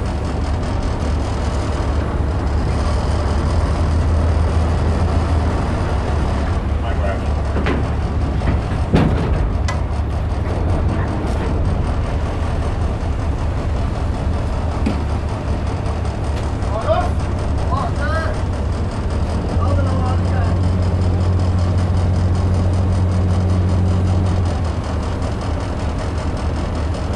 Ostende harbour, sea lock - Ostende, Fisherboats leaving lock
Fisherboats leaving the lock at Ostende harbour, vhf radio comms, engine noises etc. The hissing noise in the background is from a neighbouring dry dock where they were sandblasting another boat. Could well have done without that but you only get so many chances... Recorded with a bare Zoom H4n lying on a bollard.
Ostend, Belgium, September 2010